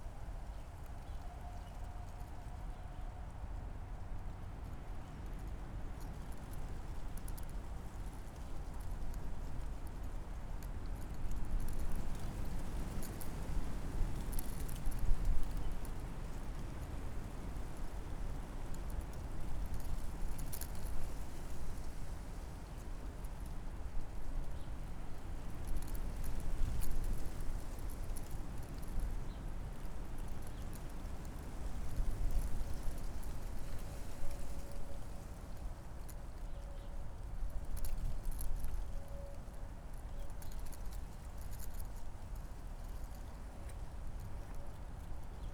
Tempelhofer Feld, Berlin, Deutschland - wind in poplar trees
Winter day, place revisited
(SD702, DPA4060)
Berlin, Germany, 28 February 2020